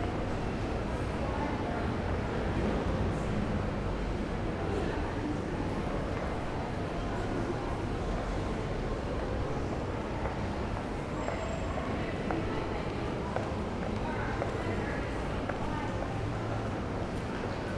neoscenes: Strand Arcade at lunch